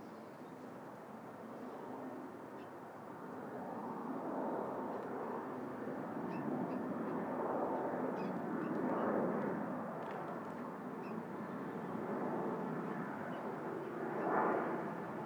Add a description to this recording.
Recording made at 22:00 using a shotgun microphone, cloudy, a lot of planes flying over head in various directions (Too and from Heathrow airport?) It has been raining all day, but now its calmer but there are still grey clouds above.